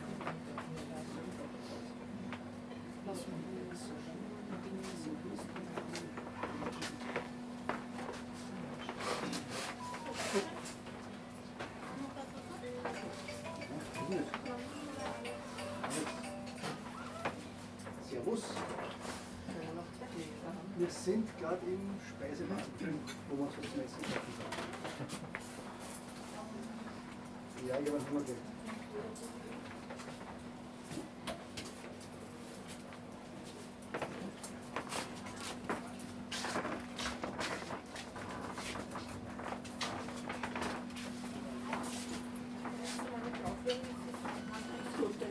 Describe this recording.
Eisenbahnmuseum Strasshof : Buffet in historical railway wagon